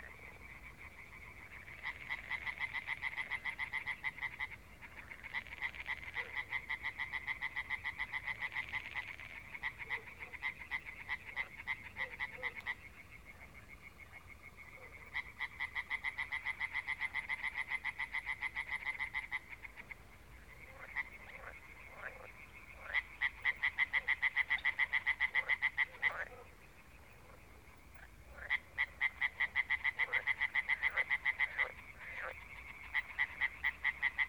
Sarnów, Poland - Stawy Sarnów (binaural)
evening tumult of water zoo.
frogs
26 May 2016